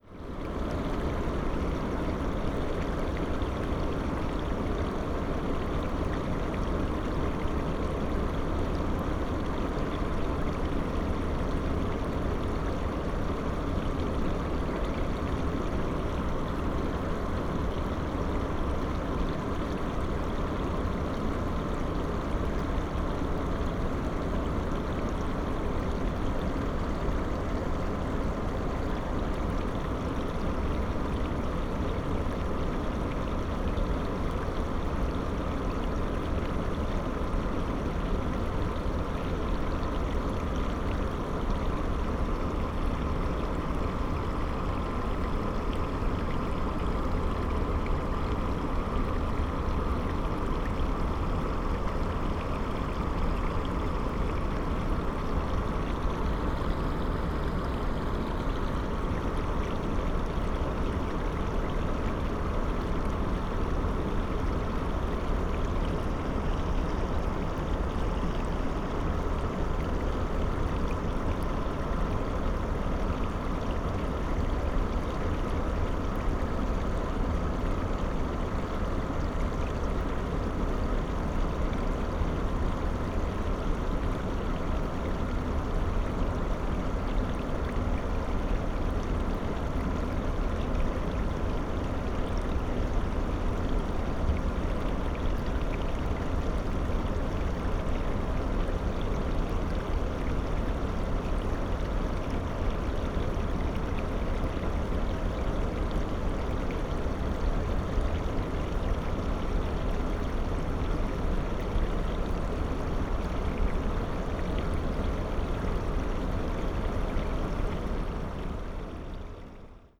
Mariánské Radčice, Tschechien - artificial pond, water inflow
near Mariánské Radčice, ever changing landscape, water inflow into a little artificial pond, night ambience near brown coal mine (Sony PCM D50, Primo EM172)
Mariánské Radčice, Czechia, September 2017